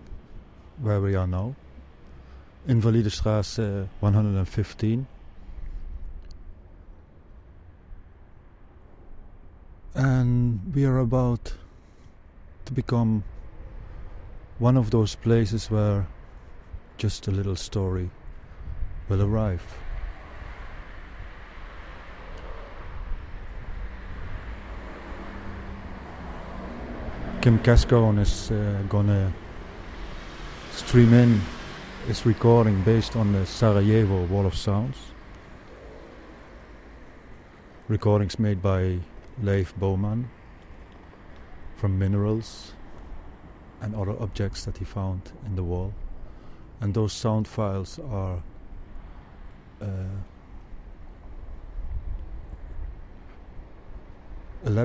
program: sound constructions
chat with kim cascone during the sound constructions / das kleine field recordings festival performances:
Trying to connect
[2]uno has launched into your reality [2]uno: radio aporee
[2]uno: START
[1]kim1: ok
[2]uno: dfdfdf
[2]uno: START!
[1]kim1: greetings from a sunny coastal town
[1]kim1: south of san francisco
[2]uno: applaus over...
[1]kim1: I'm going to be performing a piece that was done in
[1]kim1: collaboration with Leif.e.Boman
[1]kim1: who in 1998 was invited to Sarajevo
[1]kim1: to make a piece dealing with the war there
[1]kim1: he went to a small suburb
[1]kim1: and collected blocks found in the rubble of a bombed residential home
[1]kim1: he then took those blocks and built a wall
[1]kim1: surround a soldiers HQ
[1]kim1: surrounding
[1]kim1: which was part of an art exhibition
[1]kim1: he then took samples from the materials used in the blocks
[1]kim1: and performed a emission spectroscopy analysis
[1]kim1: and turned the frequencies into sound files
Berlin, Deutschland